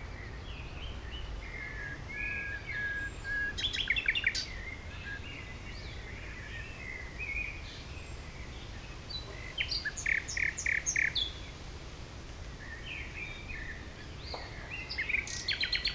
Heidekampgraben, Mauerweg, Berlin - nightingale
nightingale at Mauerweg (former Berlin Wall area)
(Sony PCM D50, Primo EM172)
Berlin, Germany, June 2018